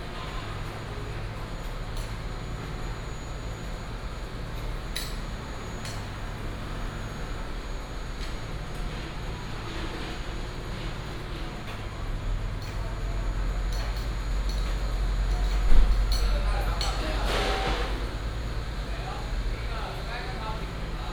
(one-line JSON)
{"title": "太麻里街74-78號, Tavualje St., Taimali Township - Construction sound", "date": "2018-03-28 15:00:00", "description": "Construction sound, Demolition of the house, Small town street\nBinaural recordings, Sony PCM D100+ Soundman OKM II", "latitude": "22.61", "longitude": "121.01", "altitude": "15", "timezone": "Asia/Taipei"}